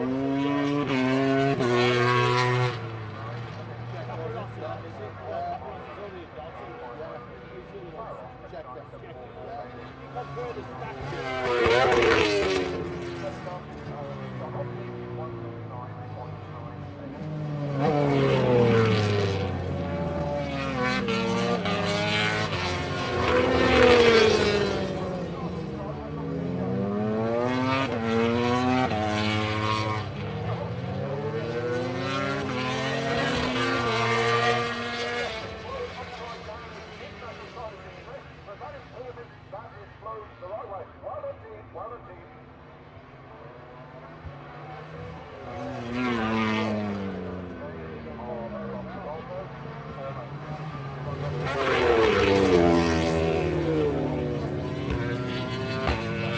Donington Park Circuit, Derby, United Kingdom - British Motorcycle Grand Prix 2005 ... MotoGP FP3 (contd)
British Motorcycle Grand Prix 2005 ... MotoGP ... FP3(contd) ... Donington ... commentary ... one point stereo mic to minidisk ...
August 2005